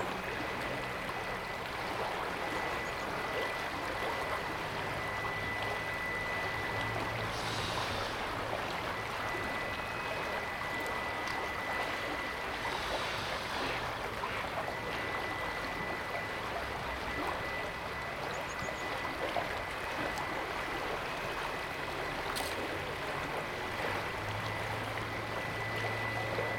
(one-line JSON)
{"title": "Lindenpl., Bad Berka, Deutschland - River through the city in Spring", "date": "2021-04-01 14:15:00", "description": "A binaural recording.\nHeadphones recommended for best listening experience.\nAnthropophonic phenomena can be observed layered within the space as the river keeps flowing. A few bird life can also be monitored.\nRecording technology: Soundman OKM, Zoom F4.", "latitude": "50.90", "longitude": "11.29", "altitude": "272", "timezone": "Europe/Berlin"}